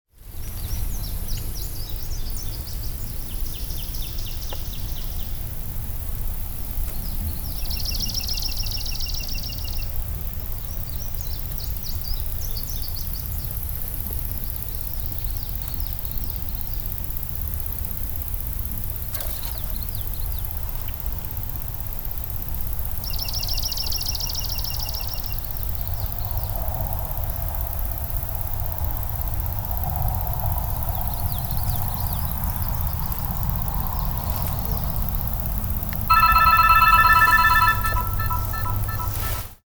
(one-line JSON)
{"title": "Grass Lake Sanctuary - Remote Sounds", "date": "2010-07-18 01:05:00", "description": "A remote part of the sanctuary, with tall plants and uneven footing. At the end of this recording, my cell phone ringtone is heard -- thats my friend Patty calling, to join me here on World Listening Day!", "latitude": "42.24", "longitude": "-84.07", "altitude": "300", "timezone": "America/Detroit"}